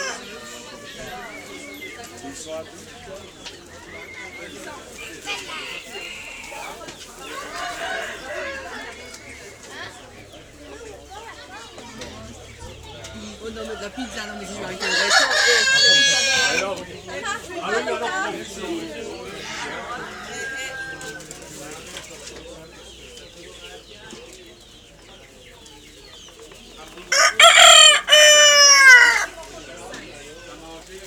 Schirmeck, France - OiseauxSauvages
enregistré lors d'une foire avicole avec mon telephone portable, déambulation à travers la foire abritée par une grande tente militaire